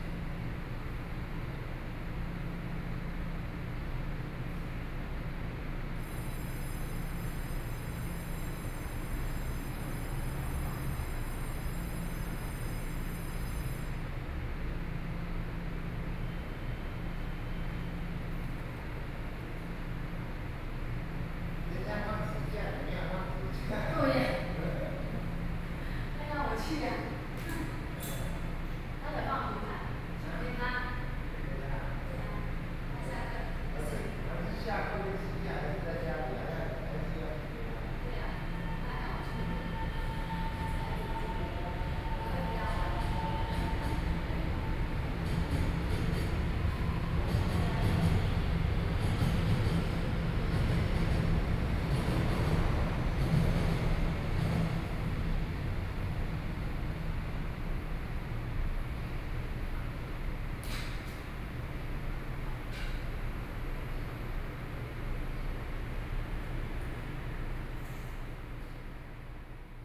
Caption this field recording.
Station hall, Sony PCM D50+ Soundman OKM II